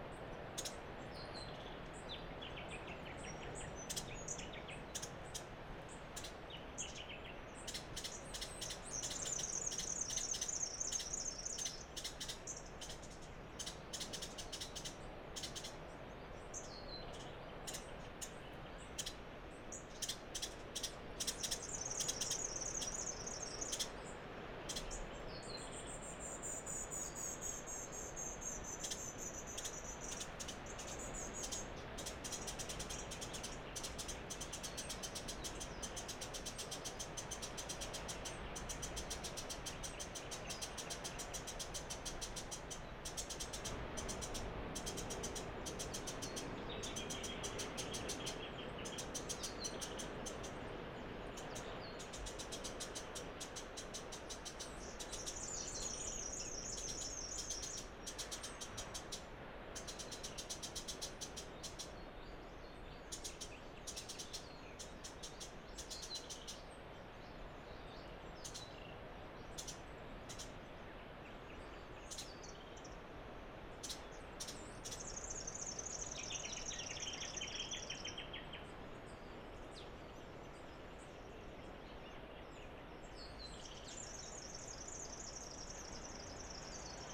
Recorded with an AT BP4025 into a Tascam DR-680.
BixPower MP100 used as an external battery.
Lilyvale NSW, Australia